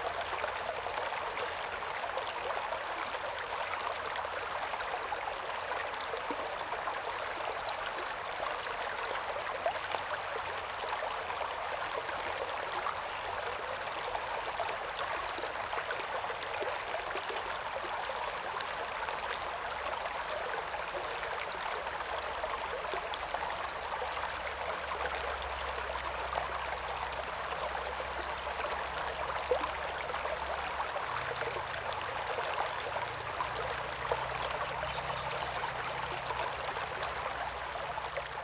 中路坑溪, 埔里鎮桃米里 - Stream
Stream
Zoom H2n MS+XY
Nantou County, Puli Township, 投68鄉道73號, 5 June 2016